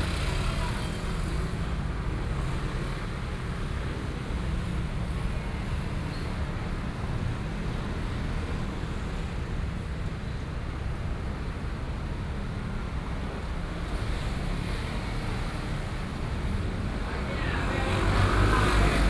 Zhonghua St., Luzhou Dist., New Taipei City - Selling sound
In front the fruit shop, Selling sound, Traffic Noise, Binaural recordings, Sony PCM D50 + Soundman OKM II
New Taipei City, Taiwan, October 2013